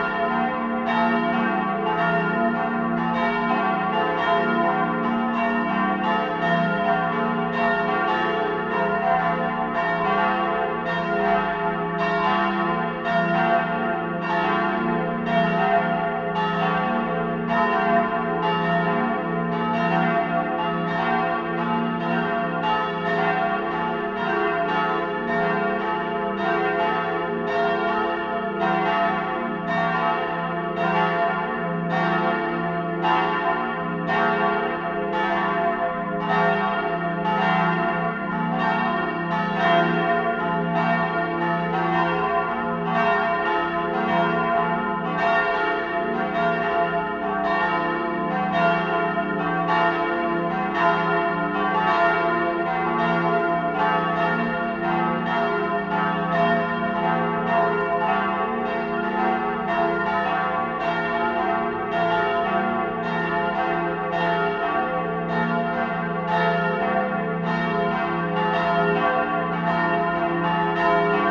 Cäcilienkloster, Köln - Sunday morning church bells
Sunday morning church bells at Cäcilienkloster Köln. relative silence after ringing, when the bells fade out slowly.
(Sony PCM D50)
Cologne, Germany, 12 January 2014